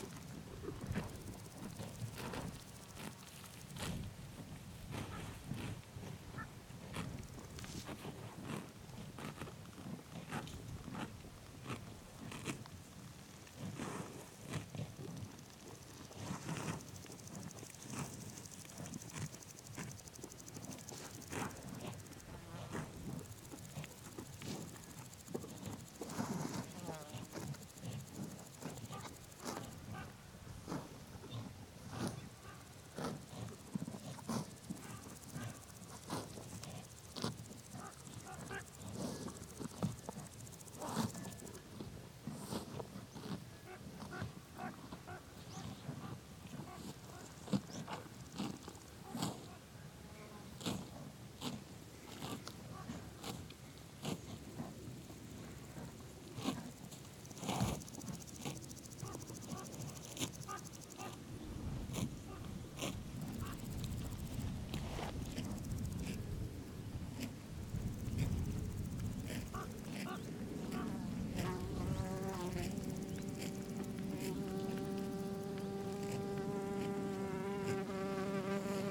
Corte, France - Lavu di Ninu
Sounds of a cow grazing near the Lake Nino, one of Corsica's most stunning mountain lake, accompanied by the bells of a herd of goats, cawing crows and flying-by insects.
Recorded on a Sound Devices MixPre-6 with a pair of Uši Pro / AB stereo setup.